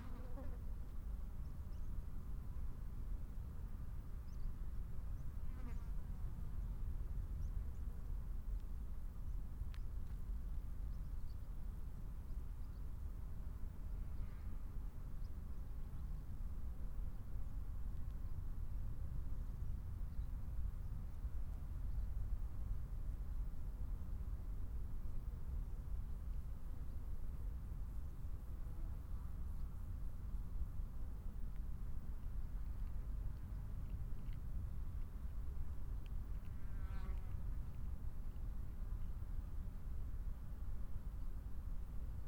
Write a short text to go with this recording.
Soundscape of the Crau, an arid stony desert area. Unfortunately also close to Marseille airport... otherwise it would just be insects and birds. Binaural recording. Artificial head microphone set up on a stone heap. Microphone facing north east. Recorded with a Sound Devices 702 field recorder and a modified Crown - SASS setup incorporating two Sennheiser mkh 20 microphones.